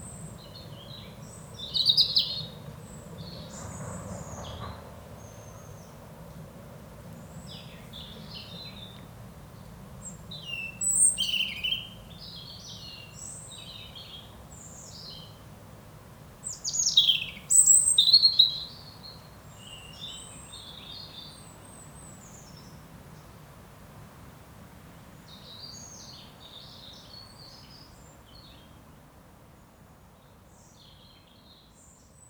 Aubevoye, France - Blackbird
A blackbird is singing in the woods, near the Seine river bank.
21 September, 17:40